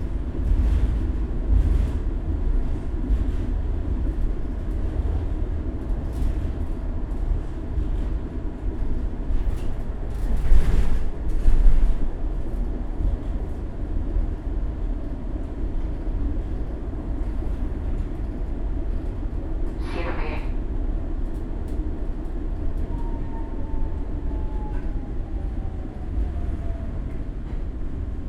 Tallinn, Kopli, tram
tram #1 from kobli to center
Tallinn, Estonia